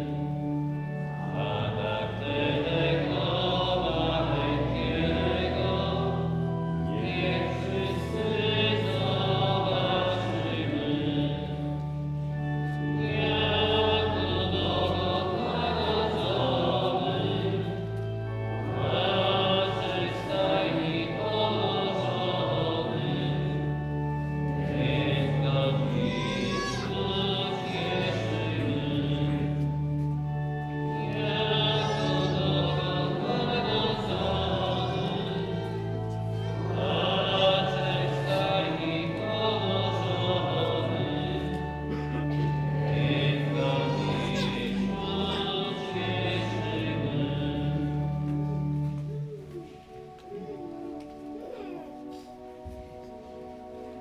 Recording of Christmas mass service on the second day of Christmas.
recording made on my request but not by myself.
Recorded with Soundman OKM on Sony PCM D100